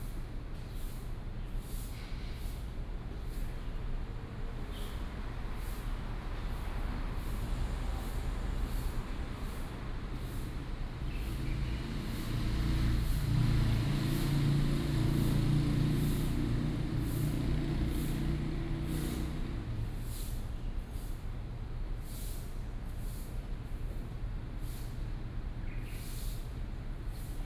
瑞安公園, Rui’an St., Da’an Dist. - Morning in the park
Morning in the park